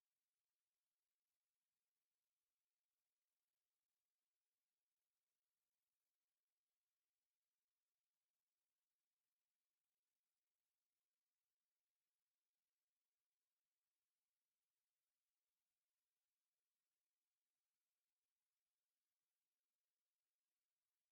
נתן אלתרמן, הרצליה, ישראל - Bicycle and cars
a man is going up and down stairs with bikes at the public school entrence. recorded with zoom h4n